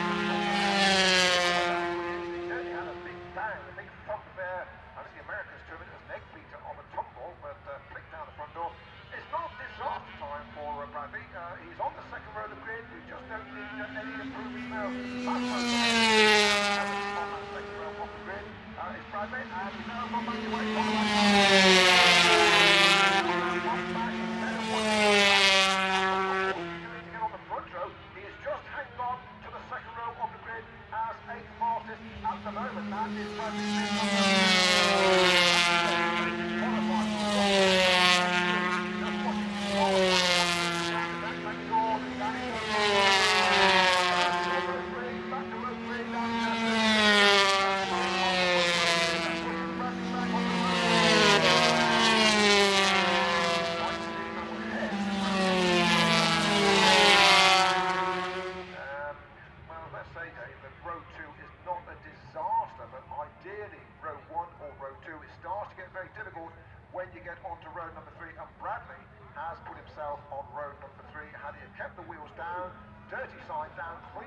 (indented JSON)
{
  "title": "Unnamed Road, Derby, UK - british motorcycle grand prix 2007 ... 125 qualifying 2 ...",
  "date": "2007-06-23 13:10:00",
  "description": "british motorcycle grand prix 2007 ... 125 qualifying 2 ... one point stereo mic to minidisk ...",
  "latitude": "52.83",
  "longitude": "-1.37",
  "altitude": "81",
  "timezone": "Europe/London"
}